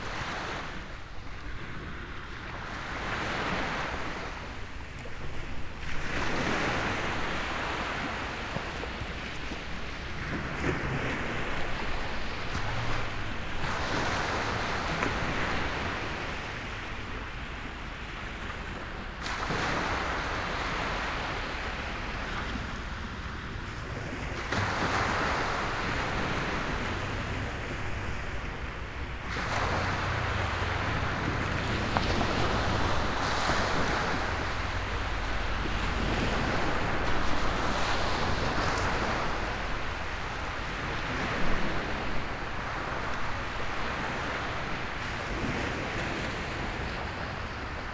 Bridlington, Park and Ride, Bridlington, UK - south prom bridlington ... falling tide ...
south prom bridlington ... falling tide ... xlr sass on tripod to zoom h5 ... long time since have been able to record th ewaves ...
England, United Kingdom, 18 June